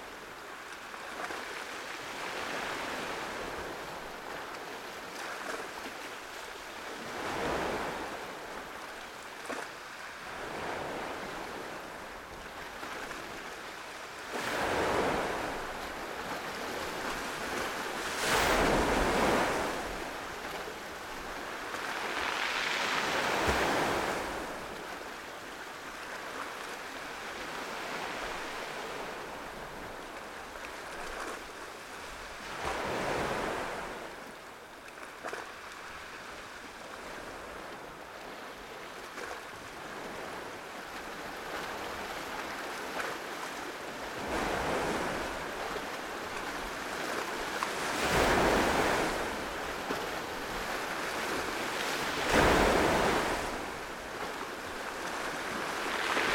Kıran Mahallesi, Menteşe/Muğla, Turkey - Waves
Karya Beach Camp, night time, sounds of waves